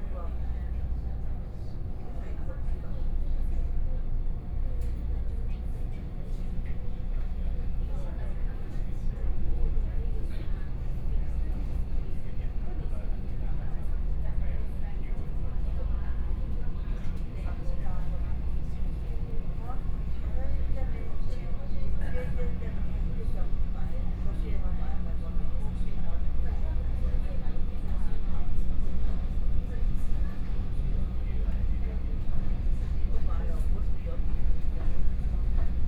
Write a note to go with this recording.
from Tai'an Station to Fengyuan Station, Zoom H4n+ Soundman OKM II